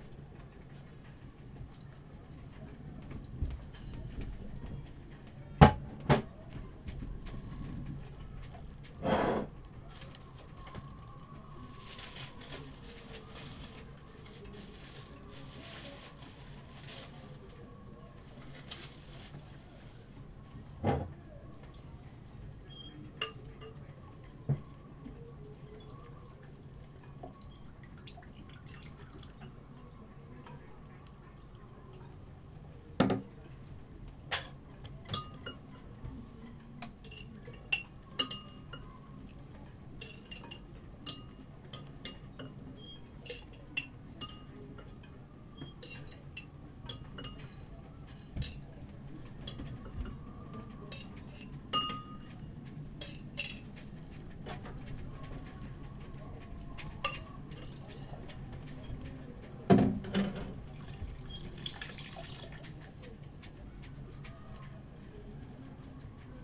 workum, het zool: marina, berth h - the city, the country & me: marina, aboard a sailing yacht
doing the dishes, music of a party from a nearby camping place
the city, the country & me: july 18, 2009
Workum, The Netherlands, 18 July 2009